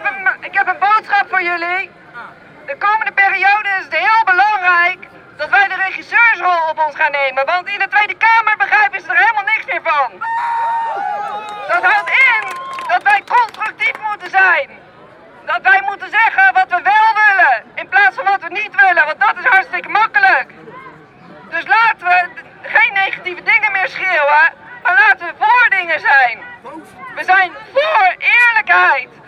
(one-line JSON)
{"title": "15O - Occupy Den Haag, Plein, speech Jessica", "date": "2011-10-15 14:00:00", "latitude": "52.08", "longitude": "4.32", "altitude": "9", "timezone": "Europe/Amsterdam"}